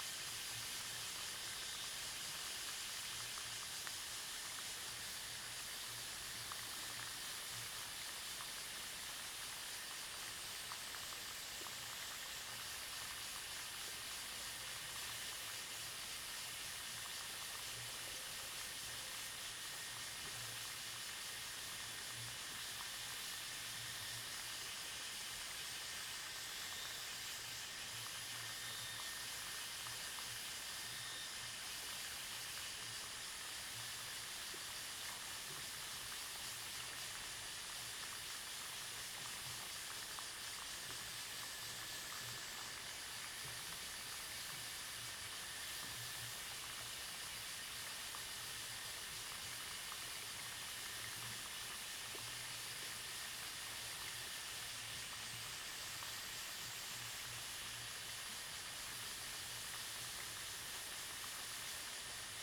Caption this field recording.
Cicadas sound, Insects sound, No water waterfall, Broken water pipes, Zoom H2n MS+XY